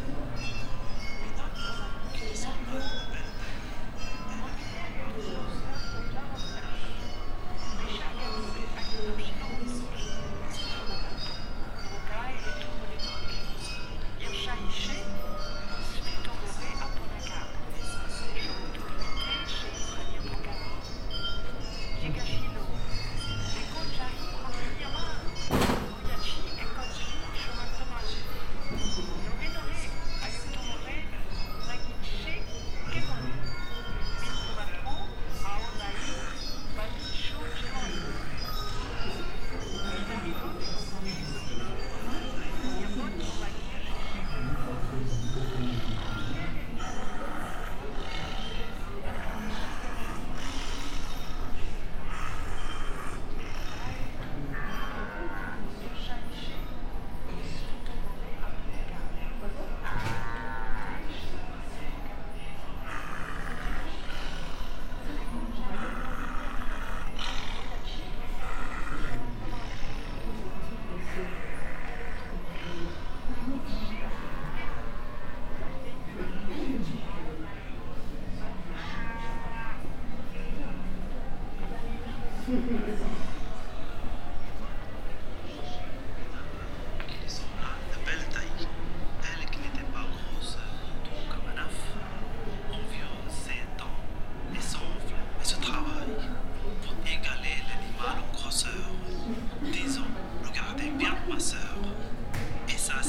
Centre Pompidou, Paris. Paris-Delhi-Bombay...
A soundwalk around the Paris-Delhi-Bombay... exhibition. Part 1